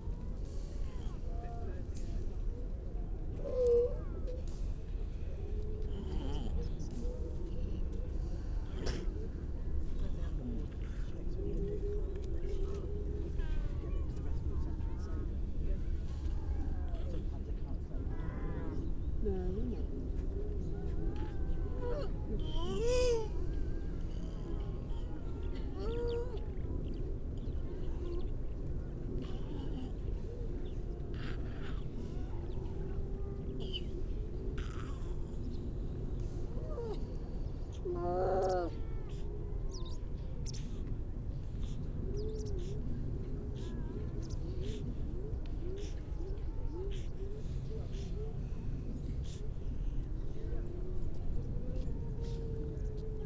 Unnamed Road, Louth, UK - grey seals soundscape ...
grey seals soundscape ... mainly females and pups ... parabolic ... all sorts of background noise ...
East Midlands, England, United Kingdom, 3 December 2019